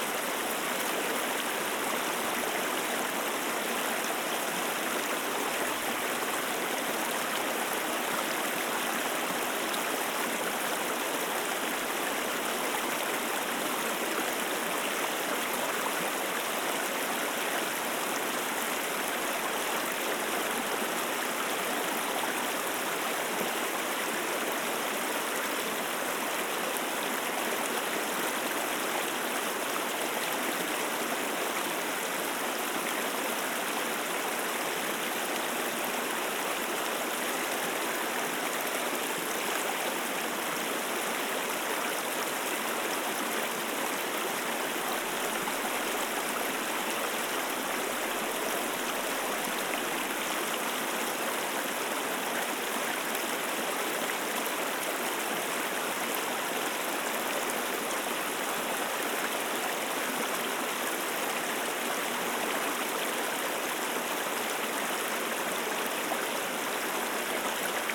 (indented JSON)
{
  "title": "Utena, Lithuania, at small dam",
  "date": "2021-12-06 16:50:00",
  "description": "Little river flowing through the park. Small natural dam. -10 degrees of Celsius - first colder day in this year. I just stand here and listen...Sennheiser Ambeo headset.",
  "latitude": "55.51",
  "longitude": "25.59",
  "altitude": "105",
  "timezone": "Europe/Vilnius"
}